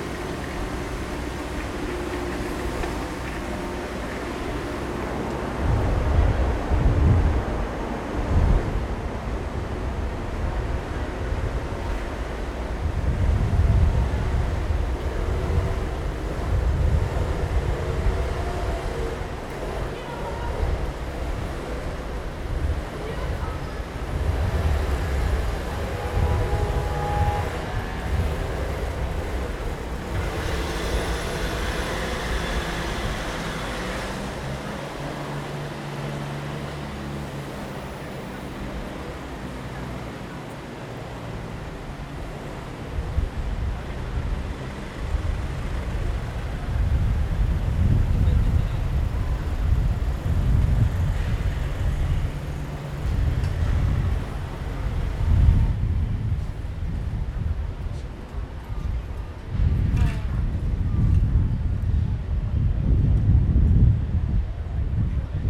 Friedrichspl., Mannheim, Deutschland - Kasimir Malewitsch walk, eight red rectangles
traffic, construction site noise Kunsthalle Mannheim
Mannheim, Germany, 31 July 2017